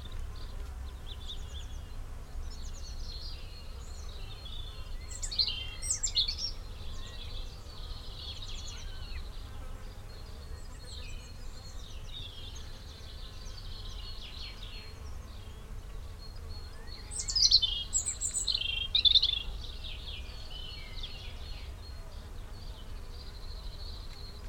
Mikro Papingo, Zagoria, Greece - of birds, bees and wing beats
Mikro Papingo, early morning, spring.... great ambience, amazing bird song and wing beats, villagers getting ready for the tourist season. Recorded in Kalliope's field using homemade SASS with primo EM 172 capsules (made by Ian Brady of WSRS) to Olympus LS 14 ....drop and collect after 6.5 hrs
Mikro Papigko, Greece